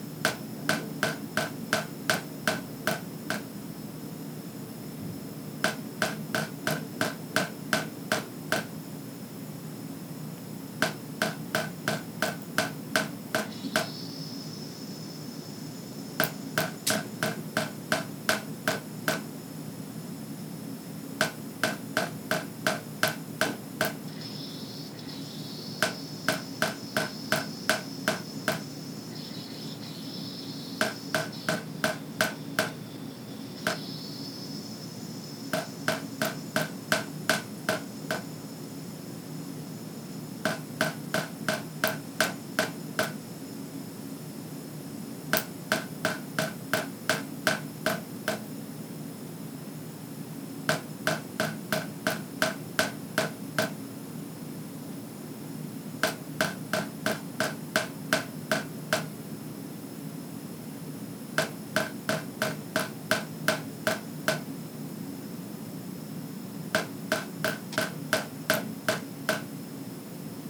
Pensione Popolo, Montreal, QC, Canada - Late night tap drip at Pensione Popolo
Just a tap dripping into the kitchen sink in Pensione Popolo's large suite.
1 January 2012, 23:30